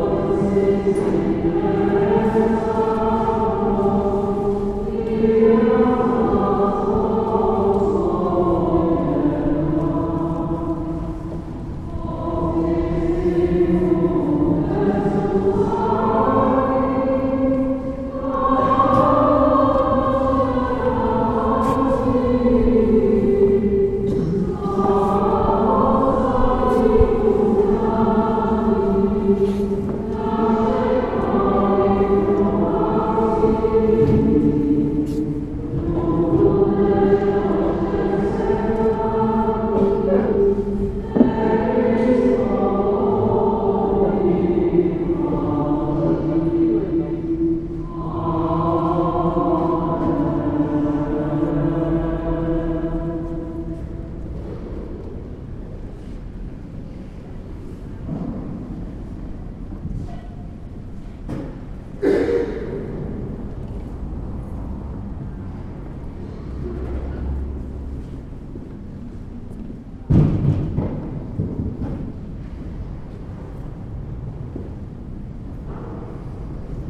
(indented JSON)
{
  "title": "Kostel svatého Ignáce Velikonoční mše - Easter Mass, people leaving the church",
  "date": "2013-03-28 19:19:00",
  "description": "The end of a worship in the church of Saint Ignatius at the Charles Square. Last evening before Easter during the Mass the bells sounds and after they get silent -\"fly to Rome\". The sound of bells until the Great Friday vigilia is replaced by clappers and rattles. During the Mass celebrated by the Jesuits, whom the church belongs was rattling discreetly suggested by two boys rhythmically klicking during the procession to the altar.",
  "latitude": "50.08",
  "longitude": "14.42",
  "altitude": "217",
  "timezone": "Europe/Prague"
}